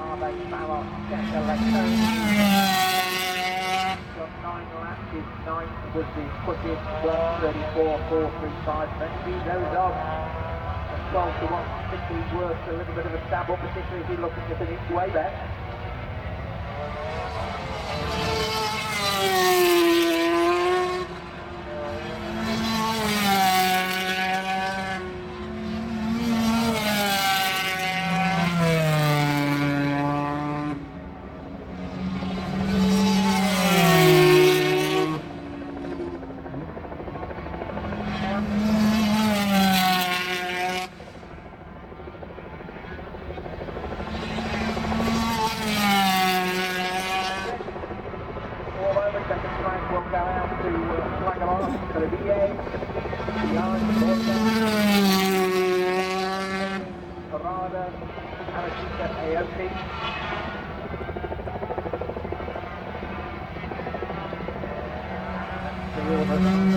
Castle Donington, UK - British Motorcycle Grand Prix 1999 ... 500cc ...
500cc motorcycle warm up ... Starkeys ... Donington Park ... one point stereo mic to minidisk ...
Derby, UK